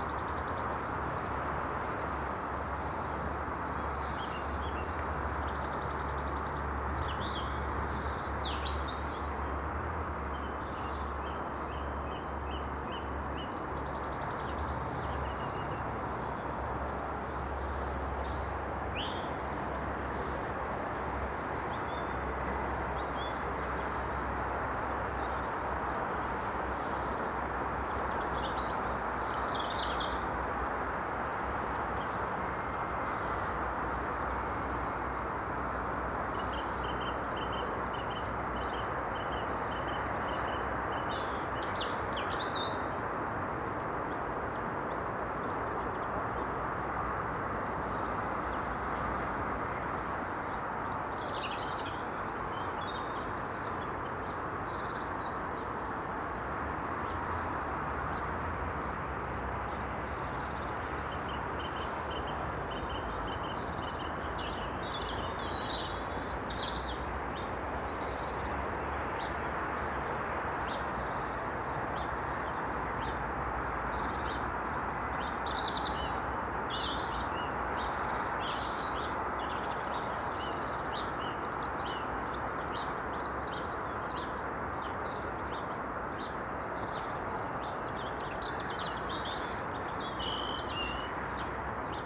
The Drive High Street Little Moor Highbury Brentwood Avenue Fairfield Road
The dawn-lit moon
hangs
in the cold of the frosted dawn
Motorway sound is unrelenting
Sparrows chat and robin sings
inside the traffic’s seething
England, United Kingdom, 1 March